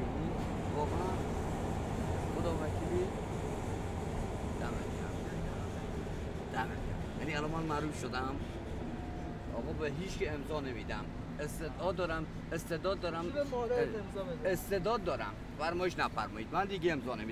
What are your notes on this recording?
I used a Zoom H6 holding in my hand and entered metro station and ...